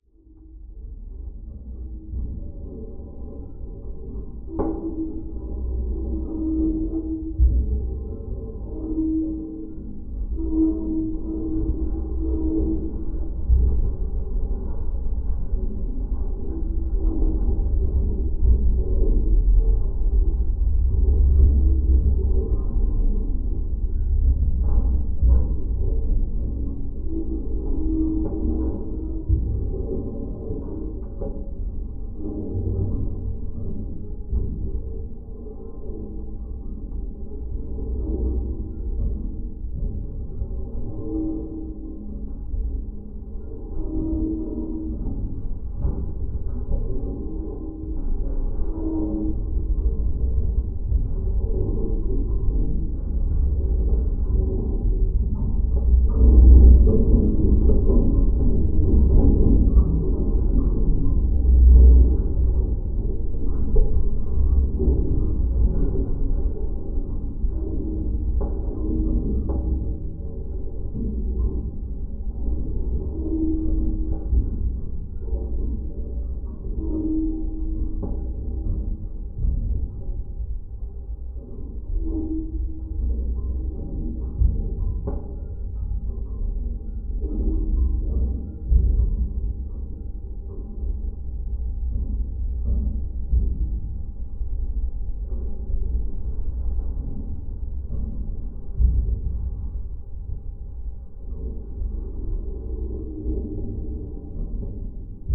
Antakalnis, Lithuania, construction for wind turbine
some tall metallic construction for wind turbine (absent). geophone recording. what I love about lom geophone it is easy to attact to metal - geophone das neodymium magnet.
Vilniaus apskritis, Lietuva